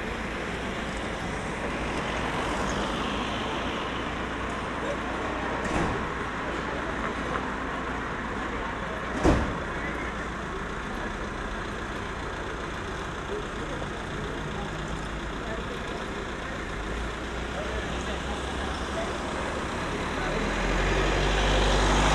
Berlin: Vermessungspunkt Maybachufer / Bürknerstraße - Klangvermessung Kreuzkölln ::: 07.07.2012 ::: 01:07